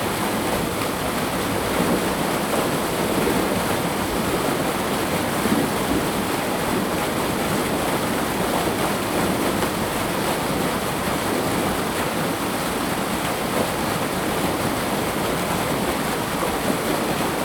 南華村, Ji'an Township - irrigation waterways
Streams of sound, Hot weather, Farmland irrigation waterways
Zoom H2n MS+XY
Ji-an Township, 花23鄉道, 28 August 2014, 08:45